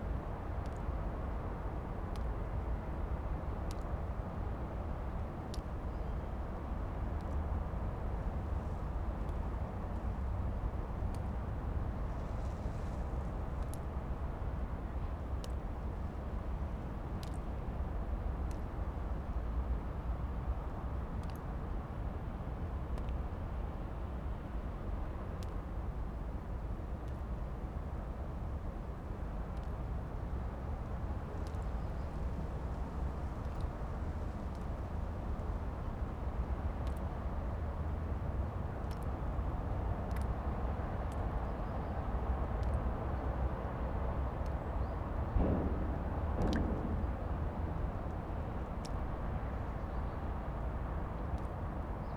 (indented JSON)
{
  "title": "Lithuania, Vilnius, at the Gediminas castle",
  "date": "2012-11-06 14:30:00",
  "description": "cityscape and autumnal rain drops",
  "latitude": "54.69",
  "longitude": "25.29",
  "altitude": "123",
  "timezone": "Europe/Vilnius"
}